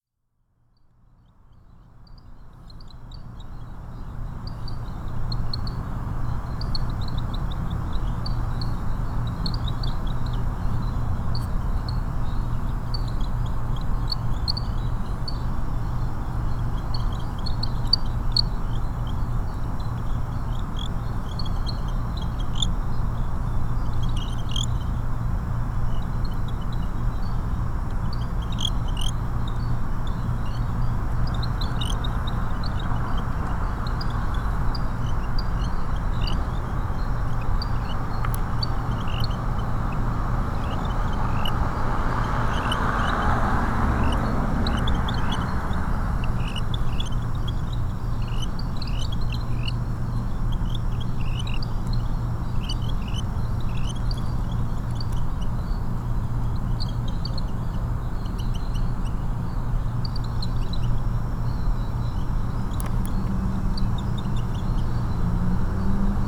Cockroach chorus, Exxon Mobile building, Downtown Houston, Texas
Cockroaches singing like pretty birds, en masse! Recorded among them, perched in the middle of a jasmine garden - where they were hiding... Urban, insects, cars, traffic, night sounds.
Church Audio CA-14 omnis with binaural headset > Tascam DR100 MK-2